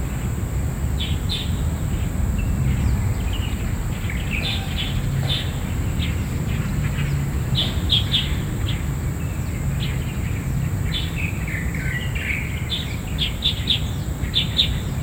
北投行天宮, Beitou District, Taipei City - birds